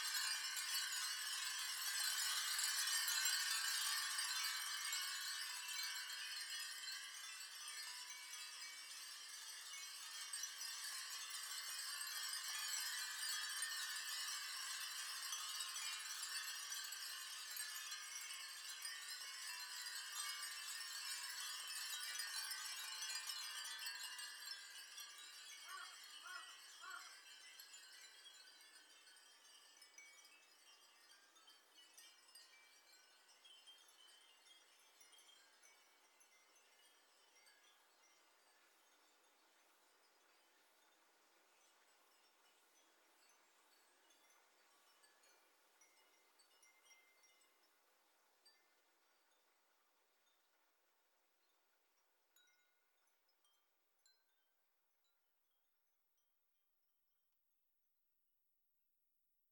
Byodoji hangs a collection of more than 3000 glass wind chimes every summer.